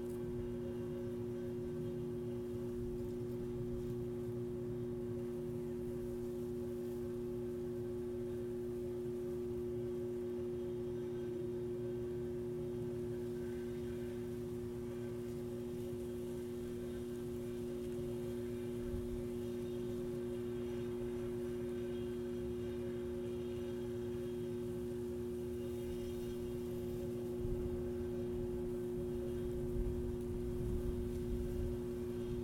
Greentree Substation, St. Louis, Missouri, USA - Greentree Substation
Recording of electric substation at end of Greentree Park. Also hum of overhead power lines and passing planes.